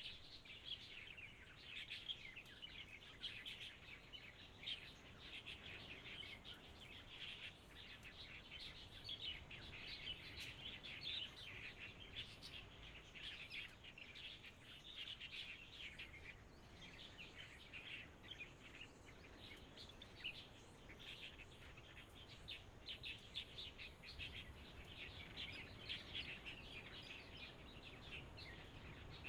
Beigan Township, Taiwan - Birds singing
Birds singing, Traffic Sound
Zoom H6 XY